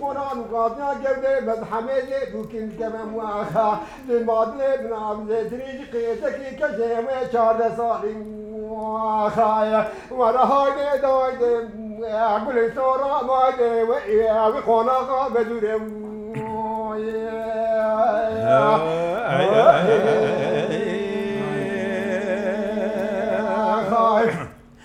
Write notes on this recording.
Kurdish traditonal dengbêj singers recorded at the Dengbêj House (Dengbêj Evi), Diyarbakır, Turkey.